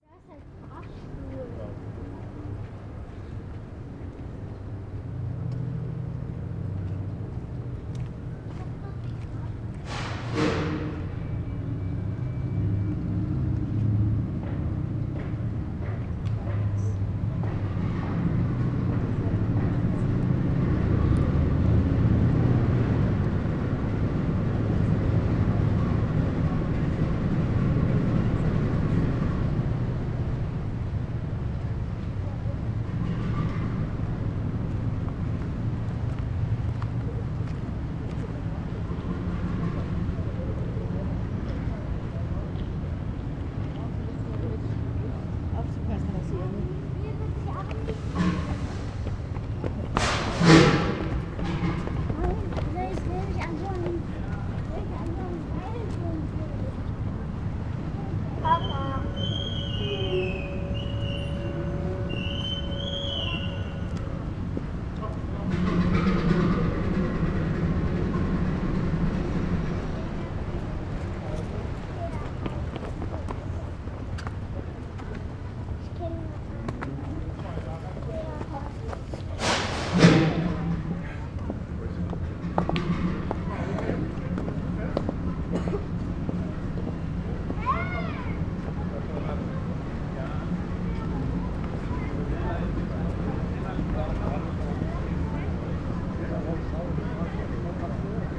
ship enters boat lift
the city, the country & me: september 5, 2010